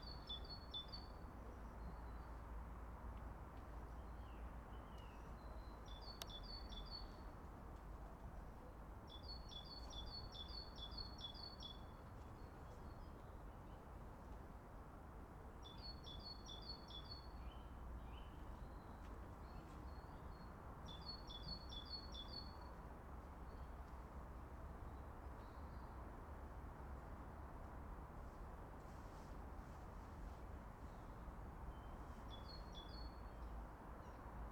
marseille, au calme
sur les hauteurs de marseille lors du tournage de vieille canaille
Marseille, France